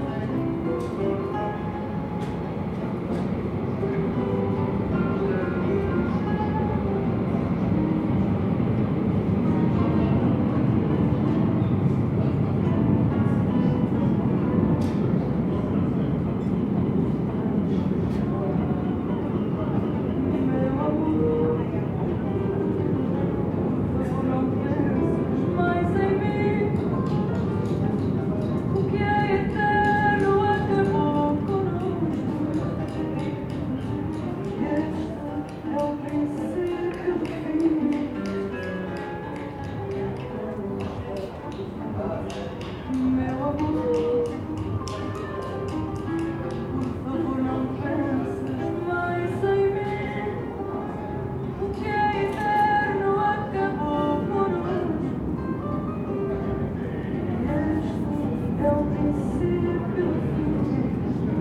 Cais da Ribeira, Porto, Portugal - Ribeira do Porto - Fado
Ribeira do Porto - Fado Mapa Sonoro do Rio Douro Douro River Sound Map
February 2014